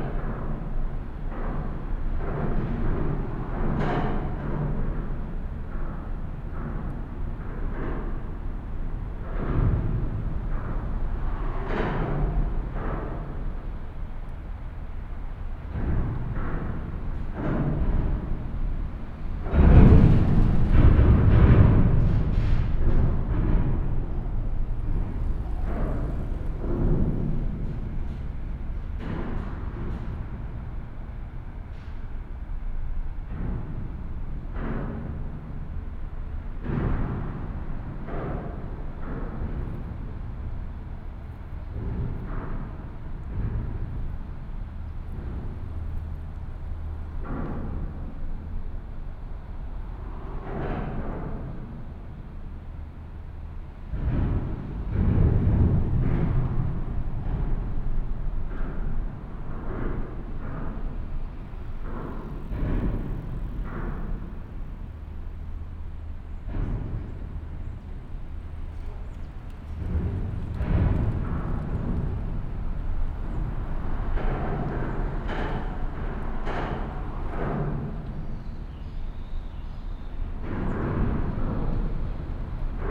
Bonn, Deutschland - urban drum-machine bonn
A bicycle and walking path is leading under the Adenauer bridge directly along the rhine. And as is often the case in such architectural situations, these places become walkable drum-machines due to their traffic.
23 July 2010, 14:10